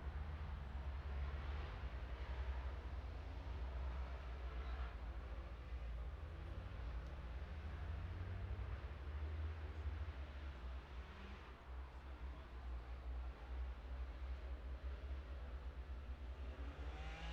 Scarborough, UK - motorcycle road racing 2012 ...
600-650cc twins practice ... Ian Watson Spring Cup ... Olivers Mount ... Scarborough ... binaural dummy head ... grey breezy day ...